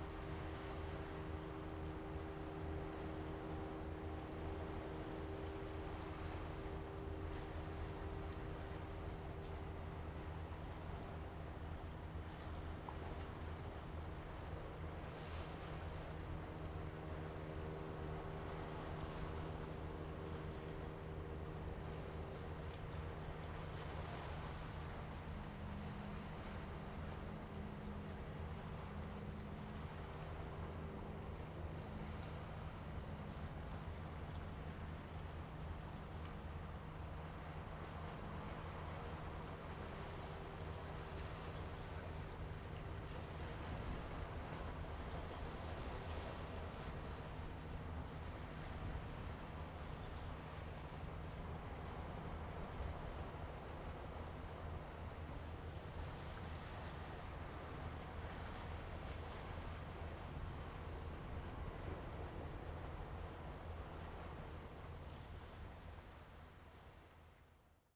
龍門村, Huxi Township - Small hill
Small hill, Sound of the waves, Fishing boats in the distance
Zoom H2n MS +XY